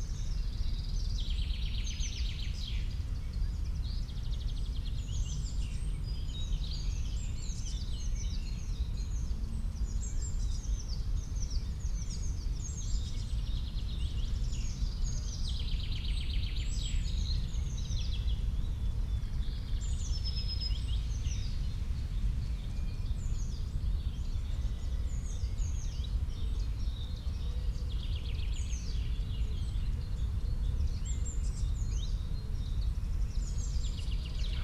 Urnenhain, Parkfriedhof Neukölln, Berlin, Deutschland - cemetery, spring ambience
morning ambience in spring at cemetery Parkfriedhof
(Sony PCM D50, DPA4060)
Berlin, Germany, 25 April 2019, ~08:00